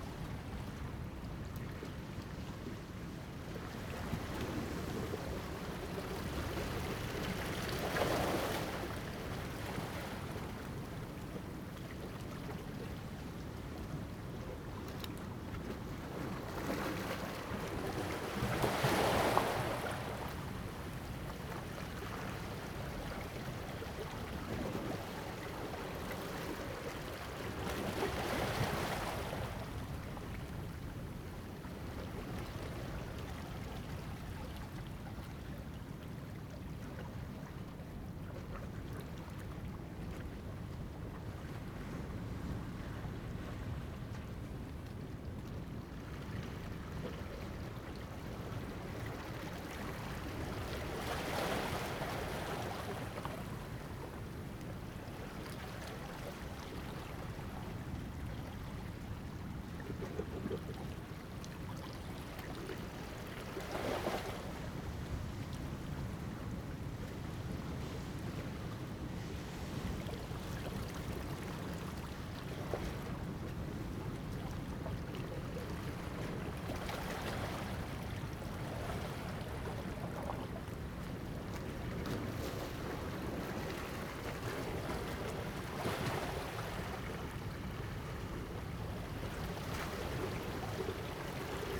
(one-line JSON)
{"title": "Jizazalay, Ponso no Tao - Tidal and wave", "date": "2014-10-29 10:15:00", "description": "sound of the waves, Tidal and wave\nZoom H2n MS +XY", "latitude": "22.08", "longitude": "121.52", "altitude": "9", "timezone": "Asia/Taipei"}